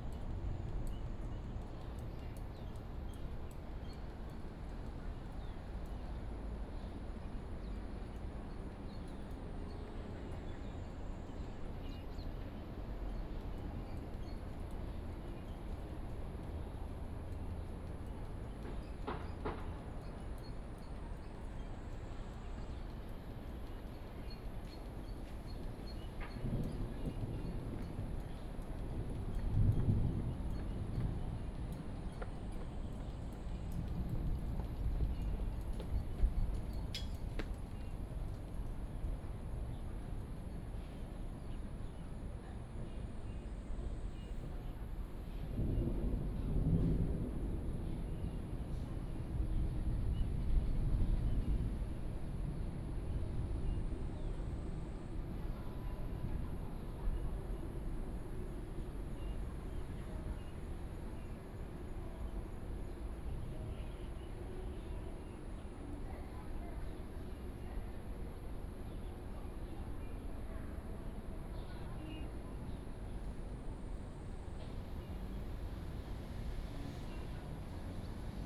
Bitan Rd., Xindian Dist., New Taipei City - Thunder sound
Bird calls, Thunder, Traffic Sound
Xindian District, New Taipei City, Taiwan